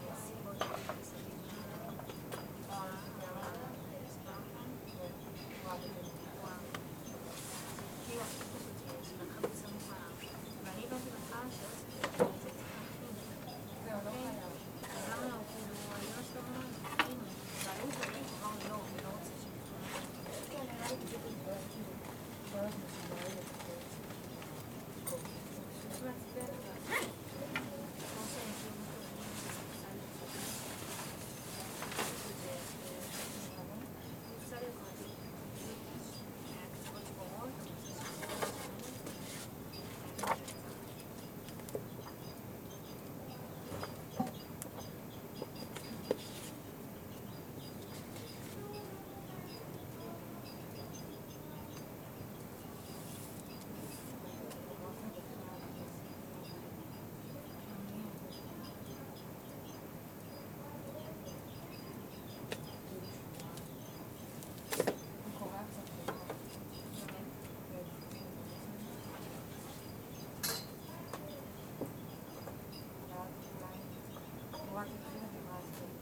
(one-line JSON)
{"title": "Martin Buber St, Jerusalem - Library At Bezalel Academy of Arts and Design", "date": "2019-03-25 10:35:00", "description": "Library At Bezalel Academy of Arts and Design.", "latitude": "31.79", "longitude": "35.25", "altitude": "811", "timezone": "Asia/Jerusalem"}